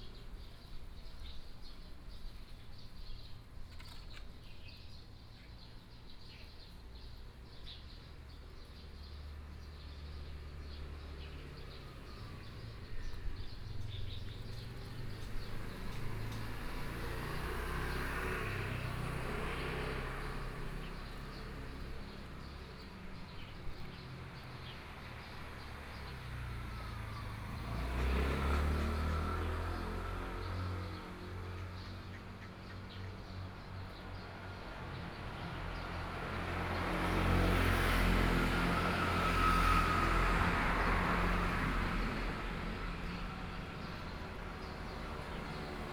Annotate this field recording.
Birds singing, Traffic Sound, Road corner, Standing under a tree, Hot weather, Sony PCM D50+ Soundman OKM II